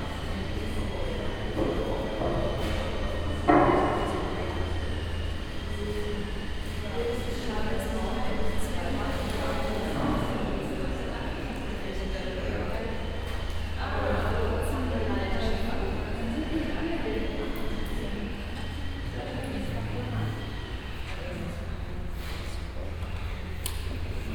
TV tower, floor - empty space
TV tower berlin, empty room 1st floor, temporary artspace, preparations for a press conference.
Berlin, Deutschland, 7 September 2010